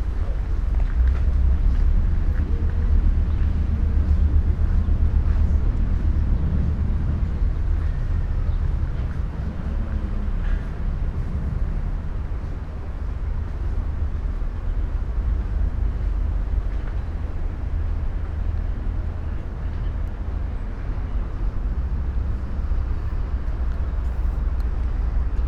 islands tail, Mitte, Berlin, Germany - time map
... on the hull of the ship
Sonopoetic paths Berlin